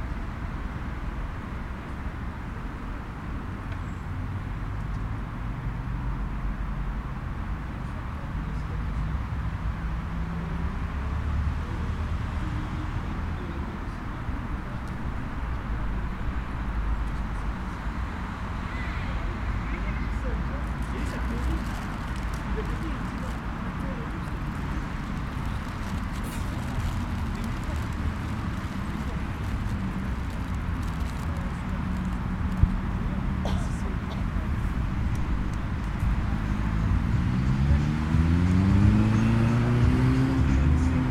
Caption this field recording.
Ambiance de la circulation au centre de Cluses.